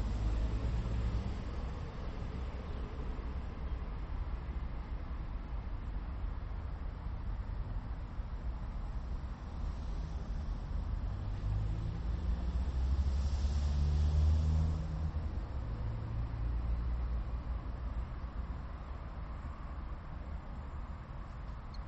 Av. do Brasil, Lisboa, Portugal - Aquilino Ribeiro Machado Garden
These recordings are intended to compare recreational spaces within the city.